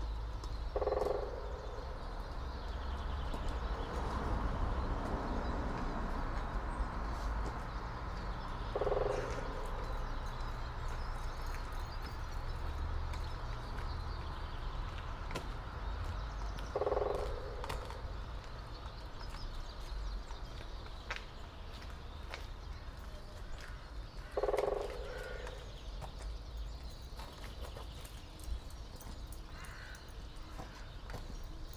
all the mornings of the ... - mar 3 2013 sun
Maribor, Slovenia, 3 March 2013, ~09:00